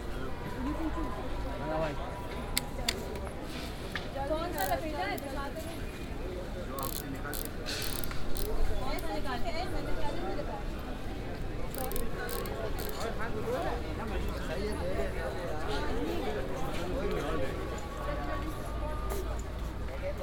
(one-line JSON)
{"title": "Empress Market, Karachi, Pakistan - Walkthrough of Empress Market", "date": "2015-10-13 18:23:00", "description": "Circular walk through of Empress Market as part of a tour. Recording starts from the middle and ends in the street outside.\nRecorded using OKM Binaurals", "latitude": "24.86", "longitude": "67.03", "altitude": "14", "timezone": "Asia/Karachi"}